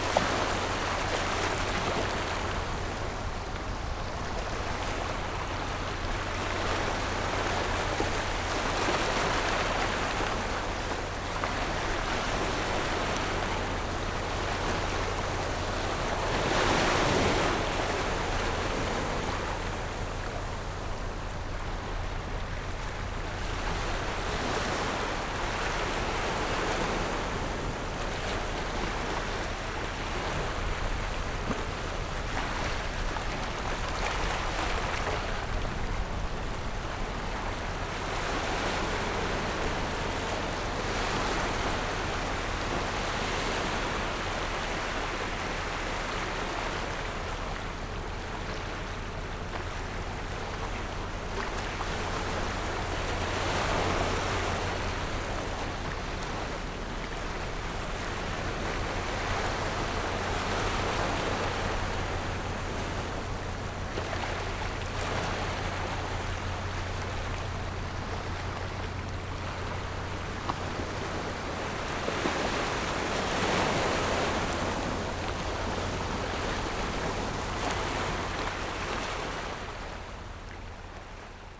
Wetlands, Tide
Zoom H6 +Rode NT4
連江縣, 福建省, Mainland - Taiwan Border, 14 October 2014